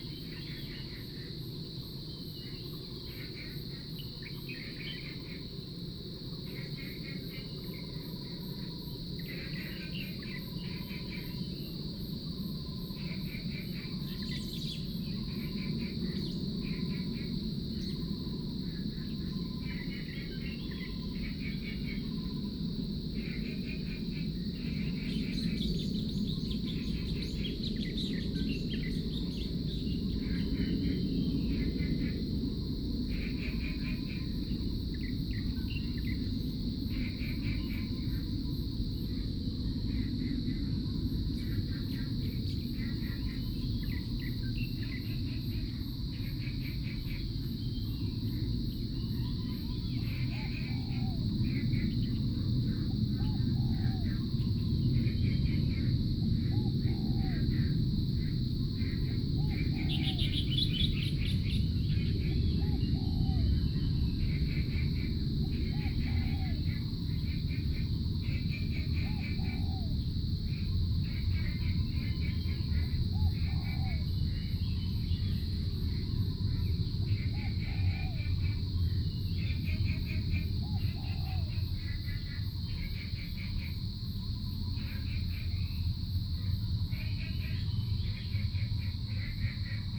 種瓜路6號, Puli Township - Early morning
Bird calls, Frogs sound, Early morning, Cicadas cry, Distance aircraft flying through
Puli Township, Nantou County, Taiwan, 10 June 2015, ~06:00